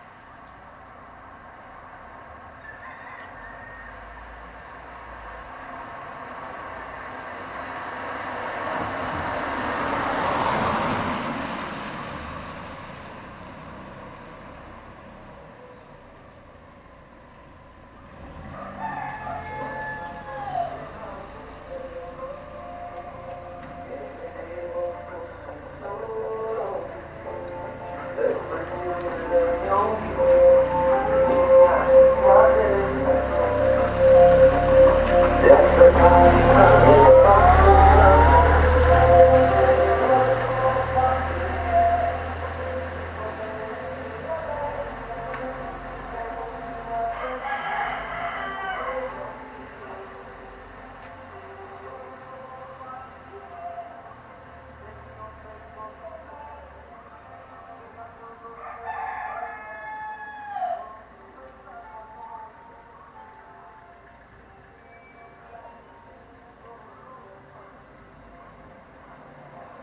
This is a set of recordings taken approx. at the same time from places very close one to another (few meters or tens of meters), to capture different perspectives of the same small village.
Recording from a street, chichens in a close courtyard, a van with music passing by, some cars.
3 November, ~15:00